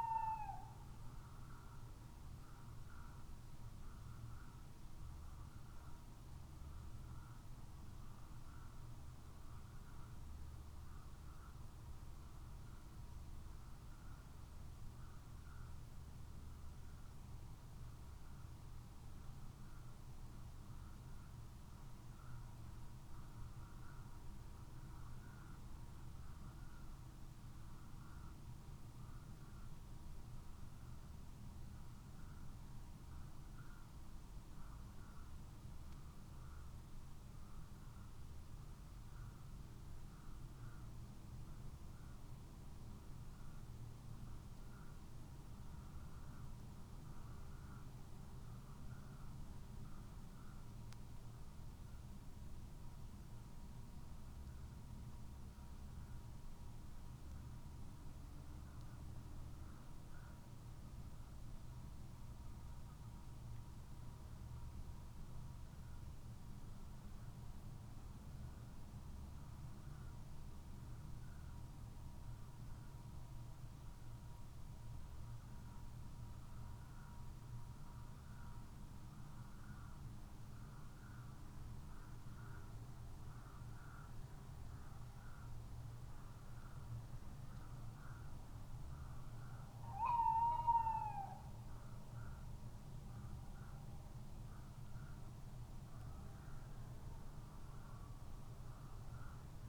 Luttons, UK - tawny owl calling ...
tawny owl calling ... xlr mics in a SASS on tripod to Zoom H5 ... bird calls then is quiet ... calls at 2:28 ... then regularly every minute ... ish ... contact call for the female ... or boundary call to show territory ..? no idea ...
Malton, UK, May 2020